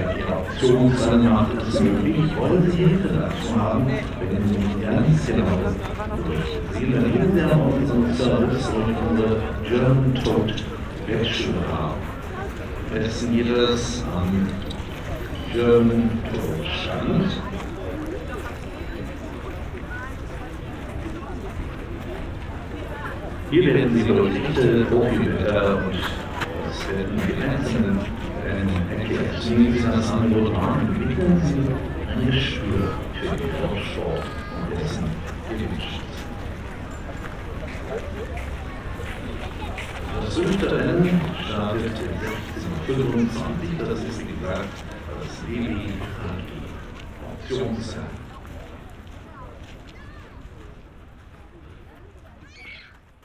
dahlwitz-hoppegarten: galopprennbahn - the city, the country & me: racecourse, courtyard
award ceremony for the forth race ("preis von abu dhabi")
the city, the country & me: may 5, 2013